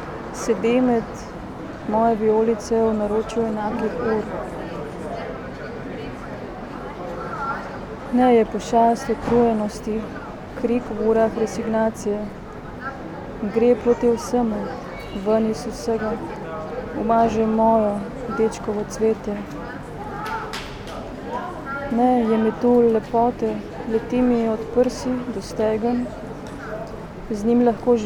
Secret listening to Eurydice, Celje, Slovenia - reading poems
reading Pier Paolo Pasolini poem with fragmented writings of my own realities (Petra Kapš)
first few minutes of one hour reading performance Secret listening to Eurydice 13 / Public reading 13 / at the Admission free festival.
13 June 2014, ~17:00